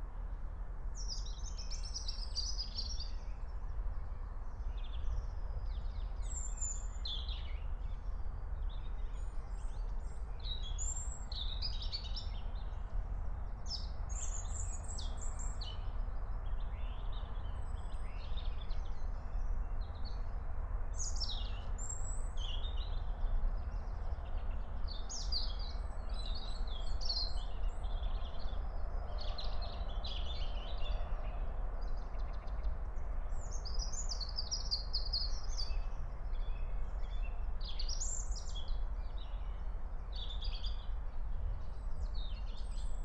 04:30 Berlin, Alt-Friedrichsfelde, Dreiecksee - train junction, pond ambience
Deutschland